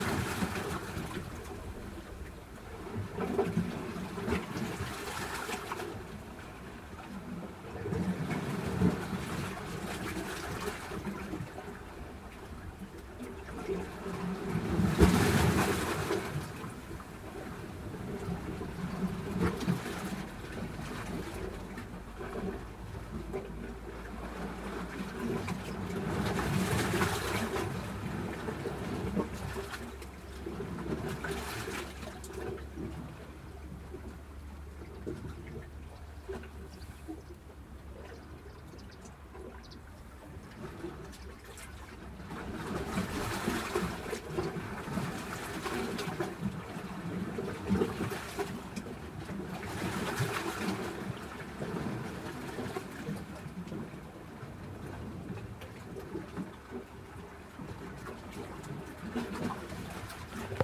Marotta PU, Italia - dentro gli scogli
ho infilato il mio Zoom H2N dentro le cavità degli scogli, tenendolo con una mano, settato su MS